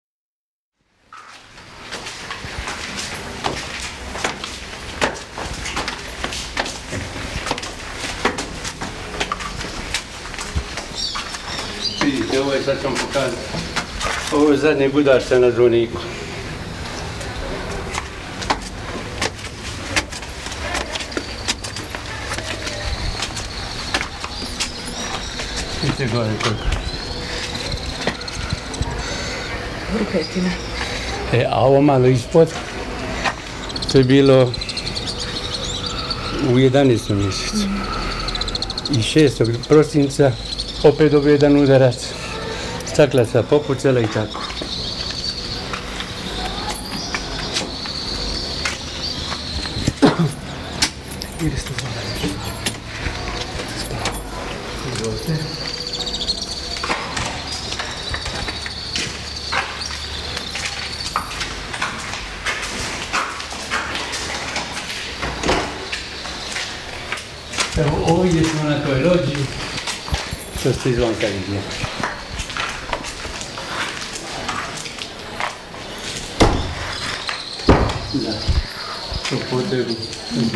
Tonci Krasovac(73)leading us towards the top of the city bell tower and showing damages made by frequent shelling; by family tradition responsible for bells and the town clock, both wound up manually, he did it every day during the siege notwhistanding danger, his angina pectoris, one lost kidney and destroyed home. His bells and the local radio were the only sounds to be heard in the town without electricity -besides the sounds of bombardment. Kept spirits high.